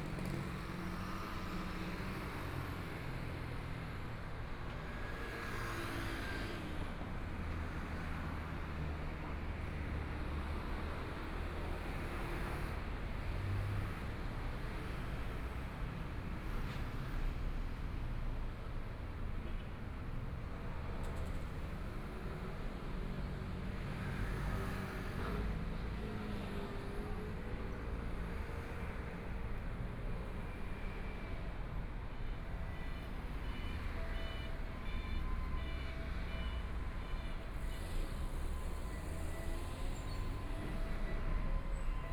Minzu E. Rd., Zhongshan Dist. - walking on the Road
Dogs barking, Traffic Sound, Various types of automotive shop, Binaural recordings, Zoom H4n+ Soundman OKM II